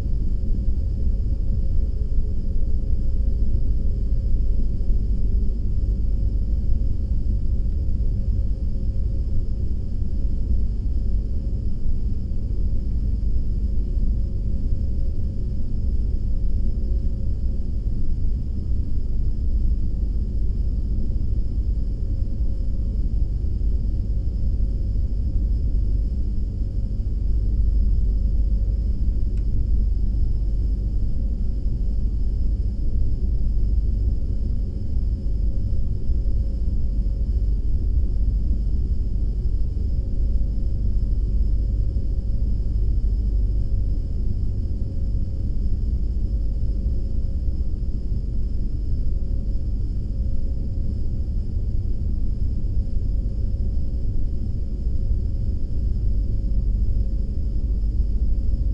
ferry Stockholm-Helsinki, contact
2nd deck of the ferry, a cabin. 4 channels recording: convebtional and contact mics
15 October 2017, 22:50